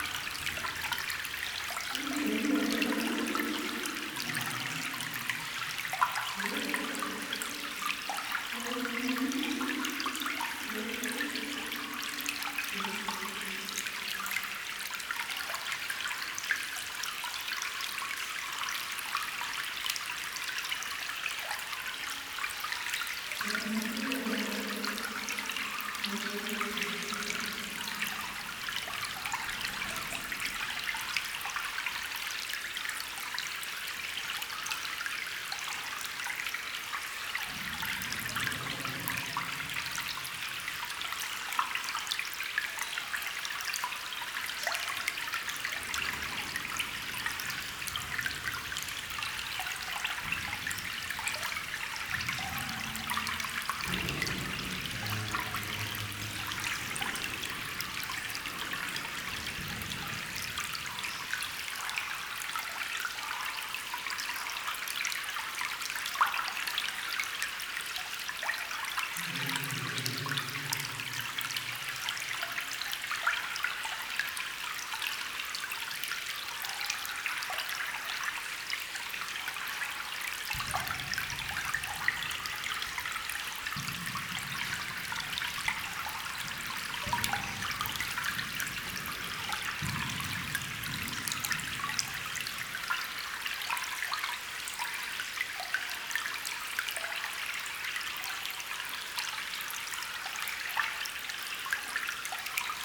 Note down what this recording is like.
A small waterstream in an abandoned iron mine and far reverberation of our discussions.